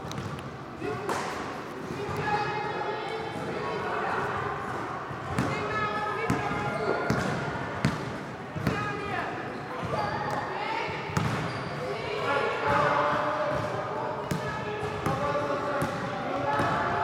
Rue Théophile Delbar, Waterloo, Belgique - Basket ball training for kids in a sporting hall.
Tech Note : Sony PCM-M10 internal microphones.